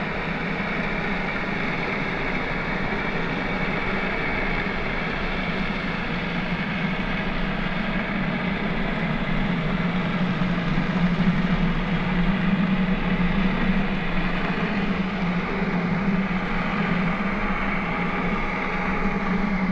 Underwater recording using 2 hydrophones. Vessels of different sizes
Zuid-Holland, Nederland, April 2021